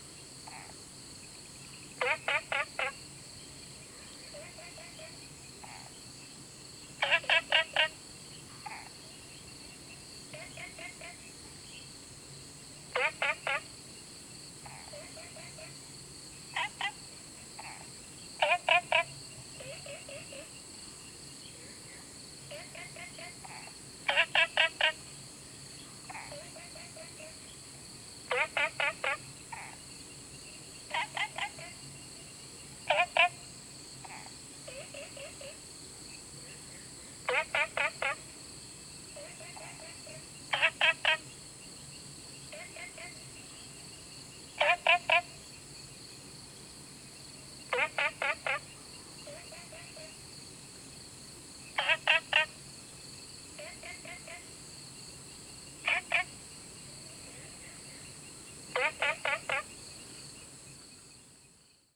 桃米巷, 桃米里, Puli Township - Frogs chirping
Sound of insects, Frogs chirping
Zoom H2n MS+XY
Puli Township, 桃米巷9-3號